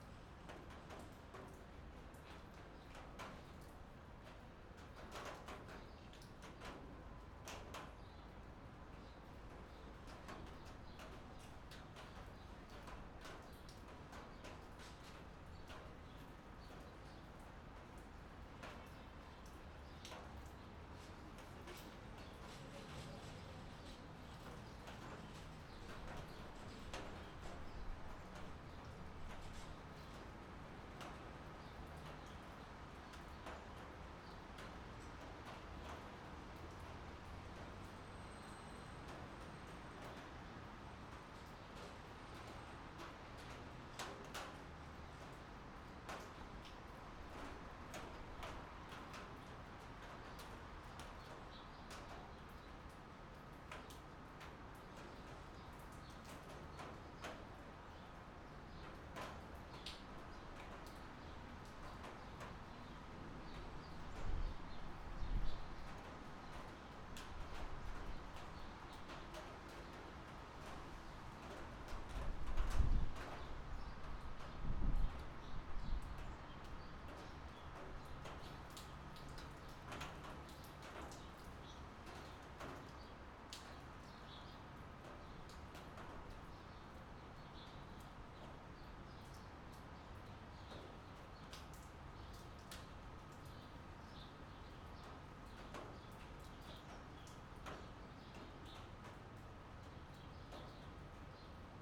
Auckland, New Zealand - Rail bridge, tin roof in rain
Under an open rail bridge there are tin roofs that protect cars from the trains above.
Sony PCM-D50, on board mics.